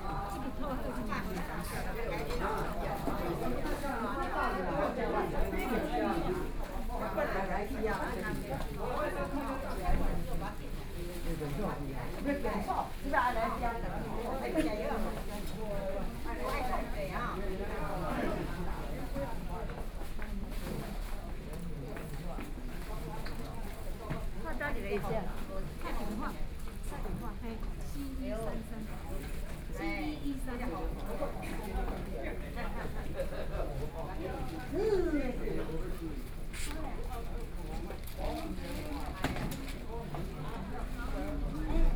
National Yang-Ming University Hospital, Yilan City - In the hospital lobby
In the hospital lobby, Old people are waiting to receive medication, Between incoming and outgoing person, Binaural recordings, Zoom H4n+ Soundman OKM II